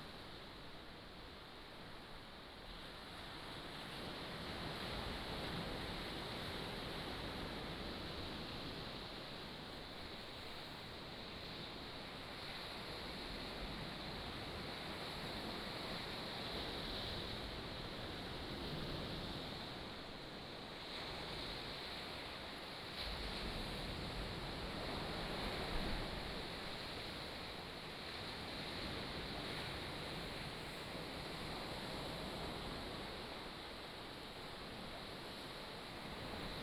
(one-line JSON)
{"title": "龜灣鼻, Lüdao Township - sound of the waves", "date": "2014-10-31 10:12:00", "description": "On the coast, sound of the waves", "latitude": "22.64", "longitude": "121.49", "altitude": "11", "timezone": "Asia/Taipei"}